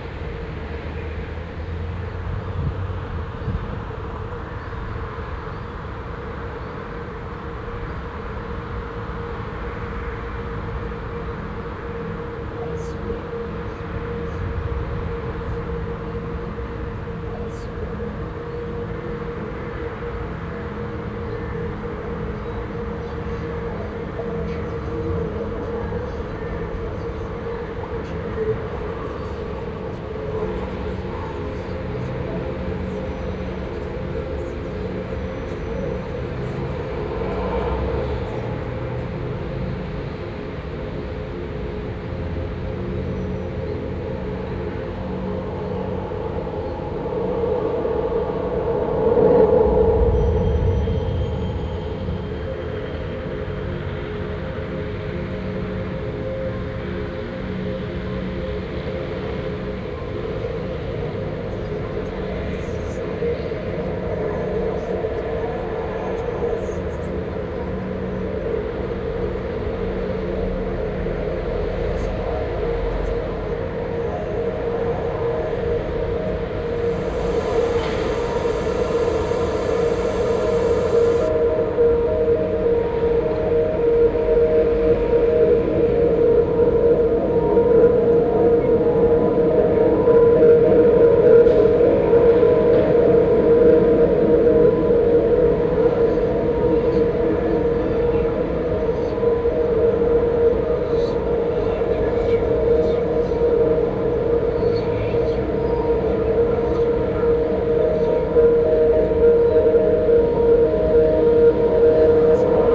Cetatuia Park, Klausenburg, Rumänien - Cluj, Fortress Hill project, water fountain sculptures
At the temporary sound park exhibition with installation works of students as part of the Fortress Hill project. Here the sound of the water fountain sculpture realized by Raul Tripon and Cipi Muntean in the second tube of the sculpture.
Soundmap Fortress Hill//: Cetatuia - topographic field recordings, sound art installations and social ambiences
Cluj-Napoca, Romania, 27 May 2014, ~10:00